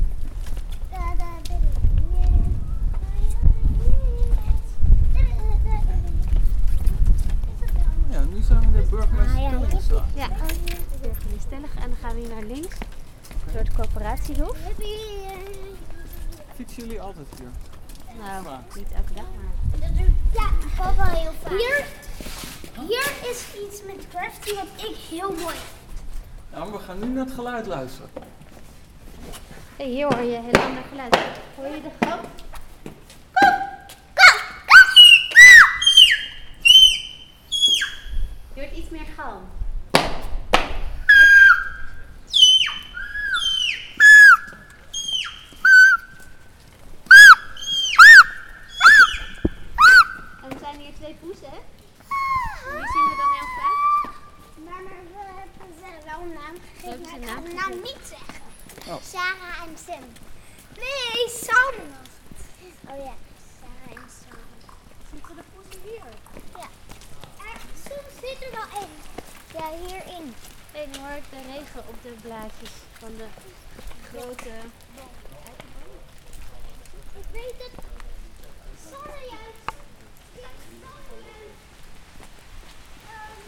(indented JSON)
{
  "title": "Onderdoorgang Coöperatiehof, Amsterdam, Nederland - Onderdoorgang/ Passage",
  "date": "2013-09-10 15:00:00",
  "description": "(description in English below)\nDe onderdoorgang naar dit hofje is de perfecte plek om, zeker als kind zijnde, je gil kwaliteiten eens goed in te zetten...\nThe passage to the courtyard is the perfect place, especially for children, to show of your screaming qualities...",
  "latitude": "52.35",
  "longitude": "4.90",
  "altitude": "6",
  "timezone": "Europe/Amsterdam"
}